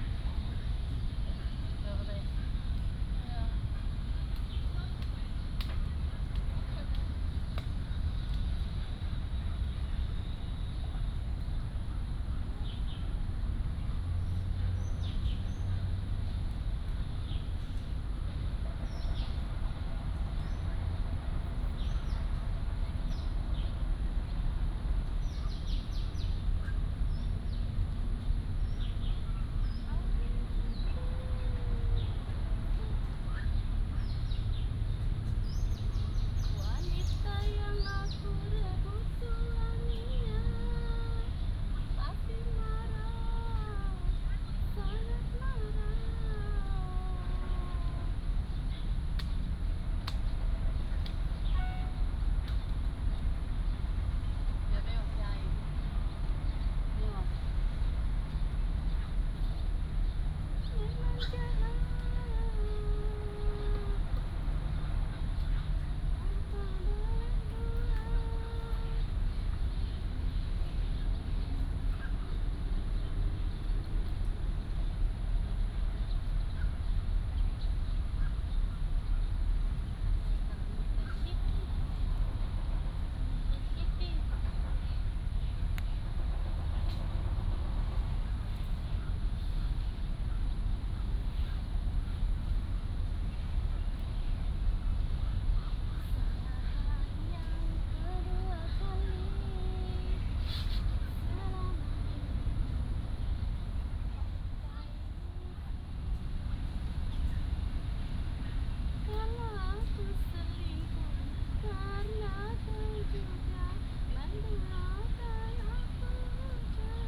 大安森林公園, Taipei City - Humming
in the Park, Bird calls, Foreign care workers are humming